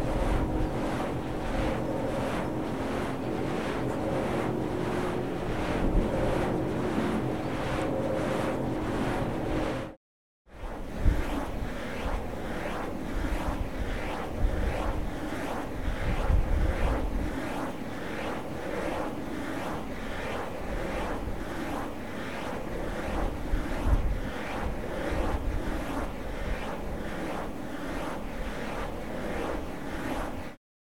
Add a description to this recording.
These wind power plants are of the first ones built in our country (1994), I recorded there in 1995, about their present (2012) technical condition I have no idea.